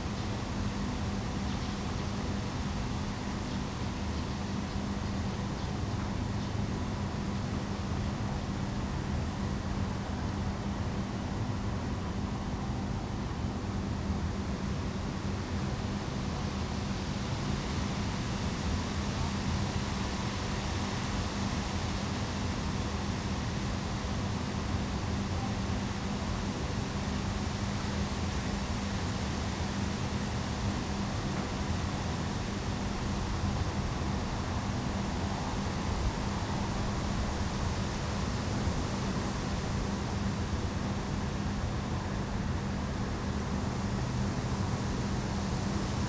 Tezno, Maribor, Slovenia - by the dumpsters
behind the factory, by a set of dumpsters full of metal shavings. i was actually waiting for some overheard metal signs to creak again in the breeze, but the y never did.